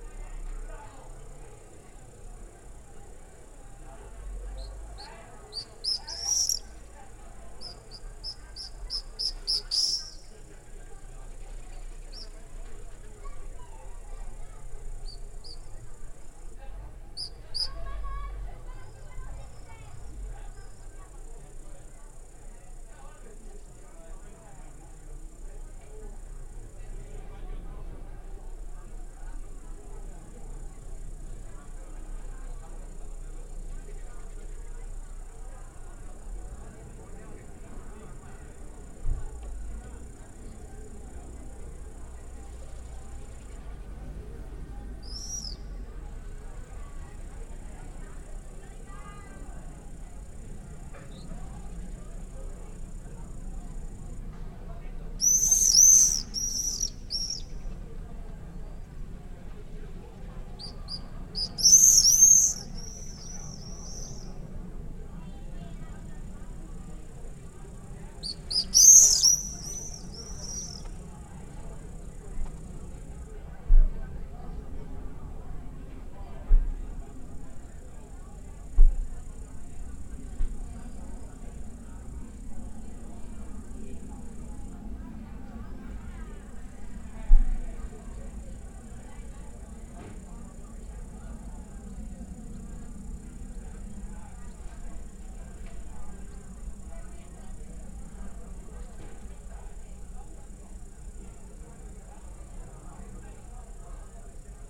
Swallows buzz the bell-tower :: Topolò UD, Italy
On several clear summer evenings I witnessed flights of swallows circling the valley and doing hard turns against the wall of the church bell-tower...no doubt in some sort of joyful game or show of stamina...the hard, flat wall of the church returned their cries...